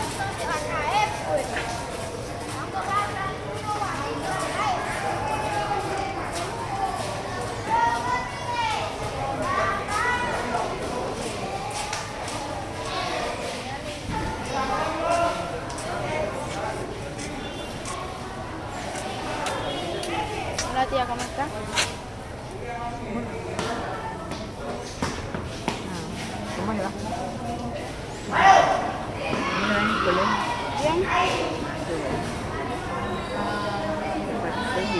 Colegio Pinillos, Mompós, Bolívar, Colombia - Pinillos
Students finishing their day at the courtyard of Colegio Pinillos in Mompox
April 2022